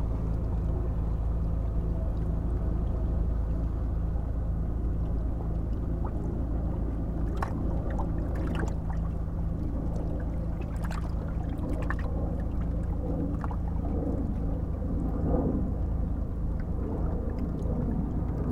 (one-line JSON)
{"title": "Beersel, Belgique - Barges", "date": "2016-08-13 13:40:00", "description": "Three barges passing by on the Brussels to Charleroi canal.", "latitude": "50.76", "longitude": "4.27", "altitude": "26", "timezone": "Europe/Brussels"}